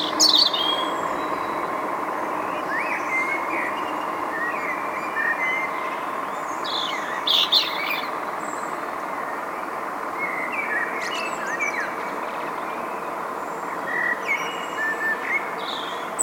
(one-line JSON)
{"title": "tondatei.de: ottostraße köln", "date": "2010-07-13 03:43:00", "description": "vogelsang, straße, straßenbahn", "latitude": "50.95", "longitude": "6.92", "altitude": "53", "timezone": "Europe/Berlin"}